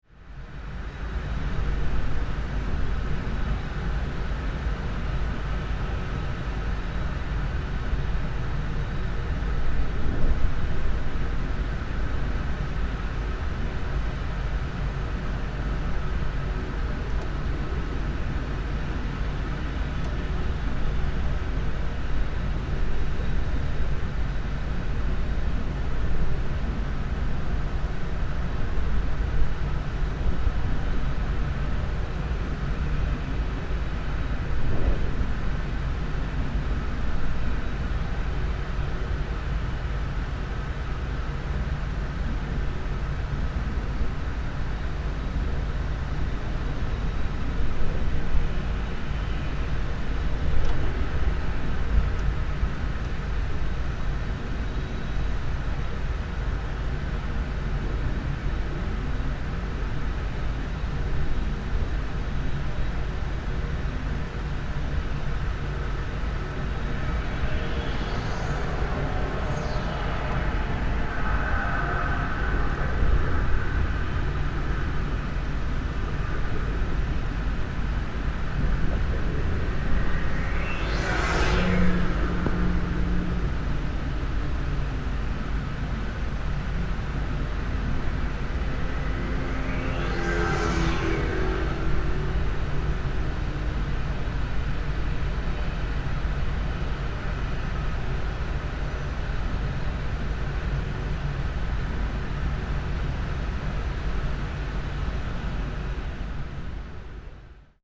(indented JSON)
{
  "title": "Botlek Rotterdam, Niederlande - Bus Stop Facing Refinery",
  "date": "2013-06-01 15:04:00",
  "description": "Whistling sound of Esso refinery at street. Wind. Recorded with two contact miss on the window of a bus stop.",
  "latitude": "51.87",
  "longitude": "4.30",
  "altitude": "3",
  "timezone": "Europe/Amsterdam"
}